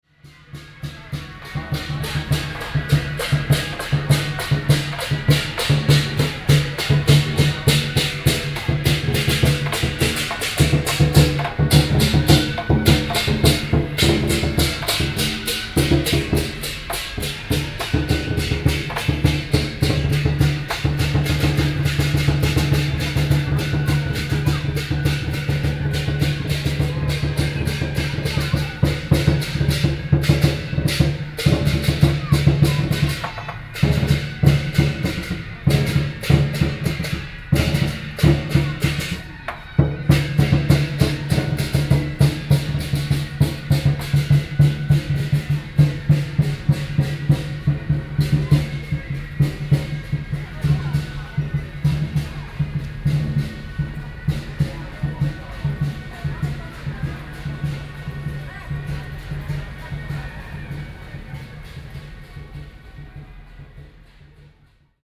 Firework, Traditional temple festivals, Gong, Traditional musical instruments, Binaural recordings, ( Sound and Taiwan - Taiwan SoundMap project / SoundMap20121115-23 )
Taipei City, Wanhua District, 貴陽街二段214號, 15 November 2012